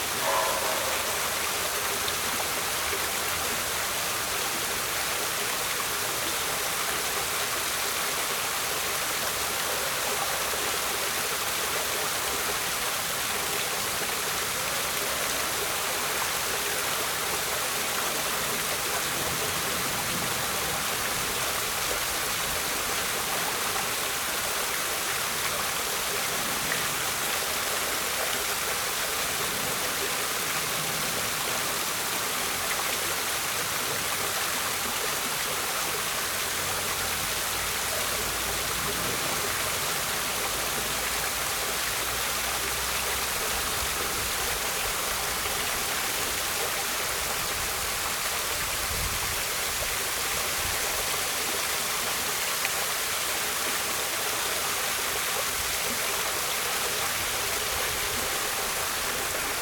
Lisbon, Portugal - Luminous Fountain (R side), Lisbon
Luminous Fountain in Alameda, Lisbon.
Zoom H6